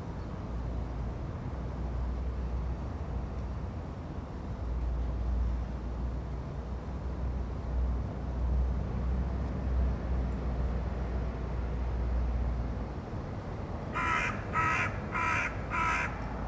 {
  "title": "Contención Island Day 18 inner north - Walking to the sounds of Contención Island Day 18 Friday January 22nd",
  "date": "2021-01-22 10:42:00",
  "description": "The Poplars High Street woodbine Avenue Back High Street\nA man goes to the solicitors\nA couple walk by\ndespite its small blue coat\ntheir whippet looks cold\nTraffic is not really distinguishable\nA herring gull chuckles\na crow calls",
  "latitude": "55.00",
  "longitude": "-1.62",
  "altitude": "68",
  "timezone": "Europe/London"
}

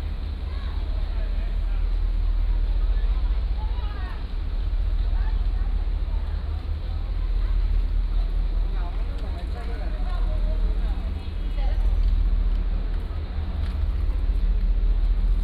{
  "title": "富岡港, Taitung City - In the dock",
  "date": "2014-10-31 15:29:00",
  "description": "In the dock, Visitor Center",
  "latitude": "22.79",
  "longitude": "121.19",
  "altitude": "6",
  "timezone": "Asia/Taipei"
}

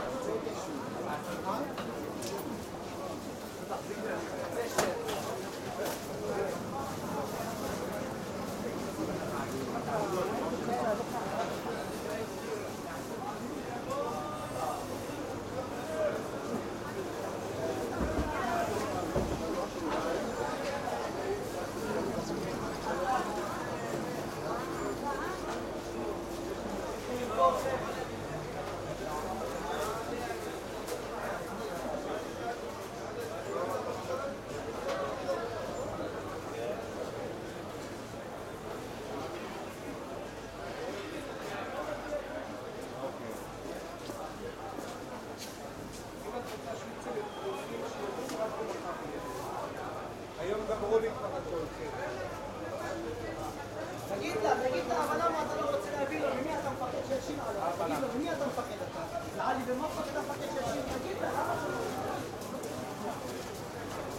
{
  "title": "Mahane Yehuda, Jerusalem, Israel - Soundwalk through the covered Shuk",
  "date": "2015-03-25 12:00:00",
  "description": "Soundwalk through the main covered street of the market, from Jaffa st to Agripas st. This is not the market's busiest time, but the nice weather and nearing passover holiday probably made more people than usual come.",
  "latitude": "31.78",
  "longitude": "35.21",
  "altitude": "817",
  "timezone": "Asia/Jerusalem"
}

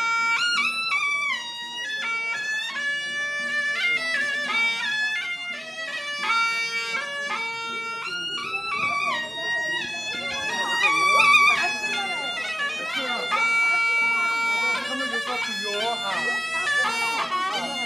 {
  "title": "Unnamed Road, Dali Shi, Dali Baizuzizhizhou, Yunnan Sheng, China - xizhouzhen",
  "date": "2020-02-19 10:07:00",
  "description": "it is the village gods birthday today. people go for blessing and celebration.",
  "latitude": "25.85",
  "longitude": "100.13",
  "altitude": "1980",
  "timezone": "Asia/Shanghai"
}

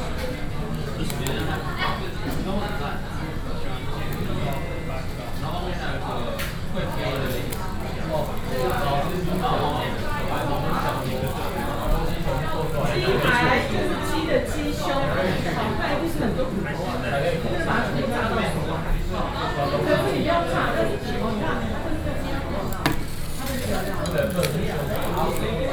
{
  "title": "Ln., Sec., Roosevelt Rd., Da’an Dist. - In the small restaurant",
  "date": "2012-06-28 17:35:00",
  "description": "In the small restaurant, Students are very much in this restaurant\nZoom H4n + Soundman OKM II",
  "latitude": "25.02",
  "longitude": "121.53",
  "altitude": "21",
  "timezone": "Asia/Taipei"
}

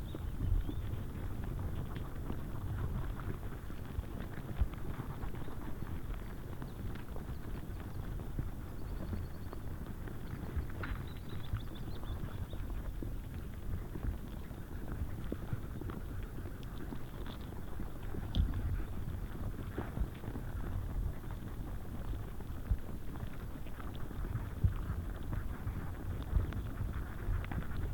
{
  "title": "Shee Water, Blairgowrie, UK - invisible",
  "date": "2022-06-10 11:14:00",
  "description": "Shee Water moss insects",
  "latitude": "56.82",
  "longitude": "-3.49",
  "altitude": "361",
  "timezone": "Europe/London"
}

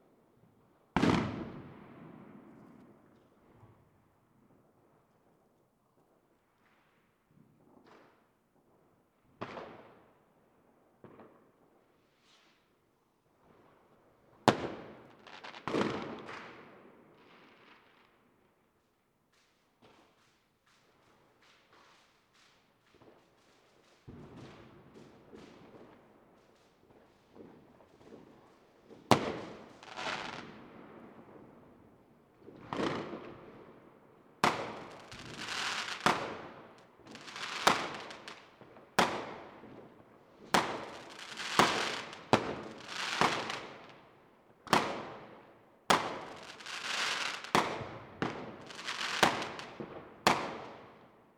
{
  "title": "bad freienwalde/oder, uchtenhagenstraße: backyard - the city, the country & me: fireworks",
  "date": "2016-01-01 00:34:00",
  "description": "fireworks on new year's eve\nthe city, the country & me: january 1, 2016",
  "latitude": "52.79",
  "longitude": "14.03",
  "altitude": "13",
  "timezone": "Europe/Berlin"
}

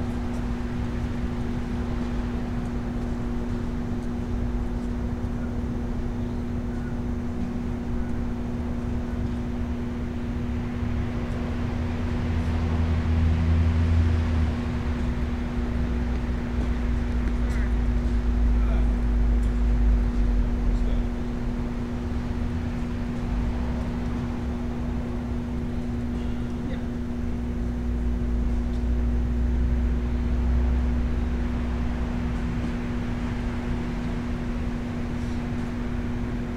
{
  "title": "King Springs Rd SE, Smyrna, GA, USA - Tennis games in Rhyne Park",
  "date": "2021-02-07 17:31:00",
  "description": "Recording near two tennis courts in Cobb County Rhyne Park. Games were being played quietly in both courts. A low hum emanates from the green electrical box behind the microphones. Other various sounds can be heard from around the area.\n[Tascam DR-100mkiii & Primo EM-272 omni mics]",
  "latitude": "33.85",
  "longitude": "-84.52",
  "altitude": "300",
  "timezone": "America/New_York"
}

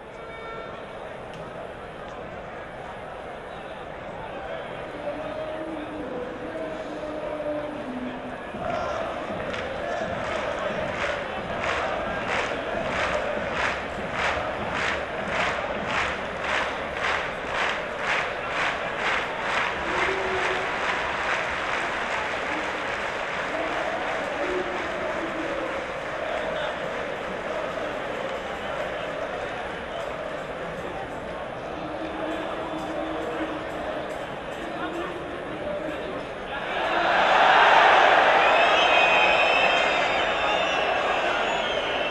{
  "title": "Maribor, stadium, soccer match - Maribor - Dudelange",
  "date": "2012-08-01 21:05:00",
  "description": "Champions League match between Maribor and Dudelange / Luxembourg, 4:1\n(SD702 Audio Technica BP4025)",
  "latitude": "46.56",
  "longitude": "15.64",
  "altitude": "277",
  "timezone": "Europe/Ljubljana"
}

